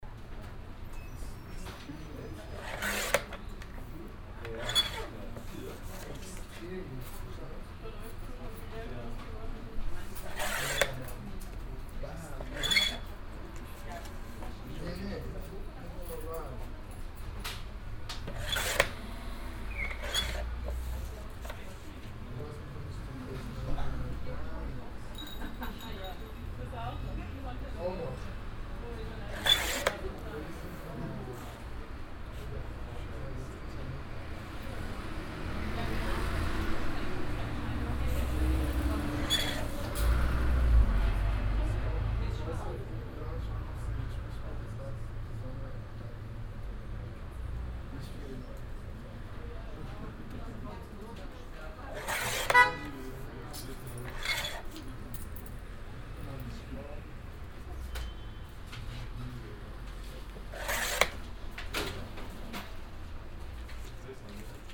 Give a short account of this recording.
manuell bedienter papierschneider im digitaldruck geschäft, soundmap nrw - social ambiences - sound in public spaces - in & outdoor nearfield recordings